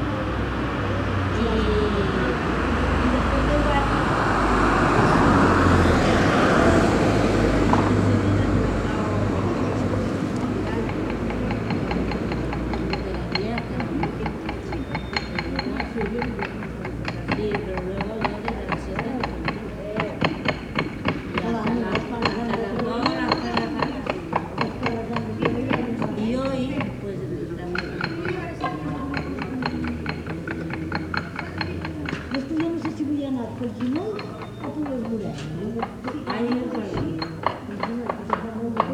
SBG, Plaça Dr. Griera - Viernes
Los viernes son el dia del mercado municipal en Sant Bartomeu. Aunque apenas son dos los puestos que se han instalado esta semana en la pequeña Plaça del Dr Griera, que hace también las veces de centro del pueblo y un habitual lugar de paso y encuentro para los vecinos.
St Bartomeu del Grau, Spain